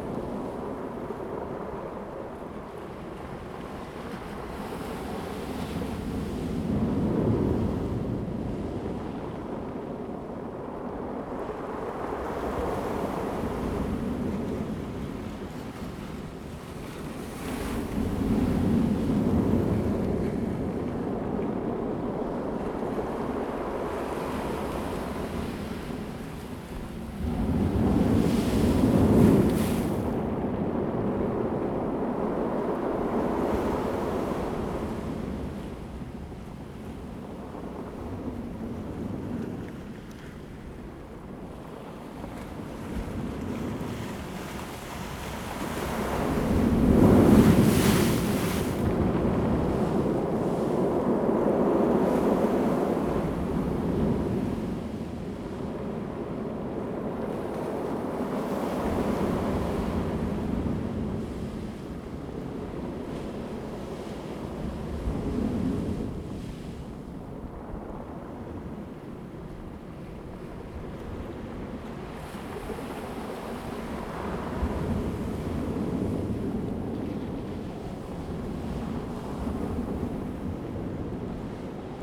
Sound of the waves, In the circular stone shore, The weather is very hot
Zoom H2n MS +XY
Taitung County, Taiwan, 5 September 2014